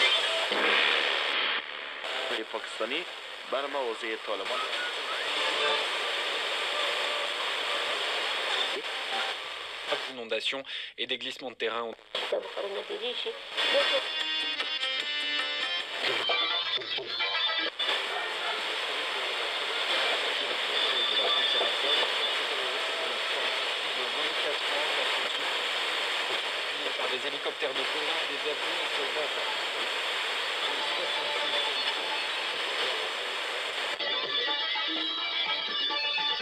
Kabul, Tahimani, 8th street, FM radio frequency searching...